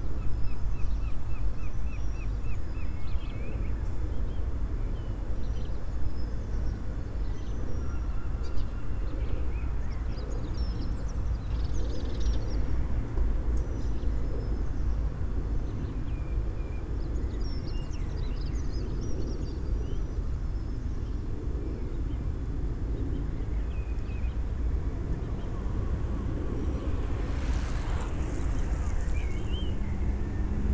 John Street, Brighton - Waiting for my lift
Sunday morning - waiting for my lift to Manchester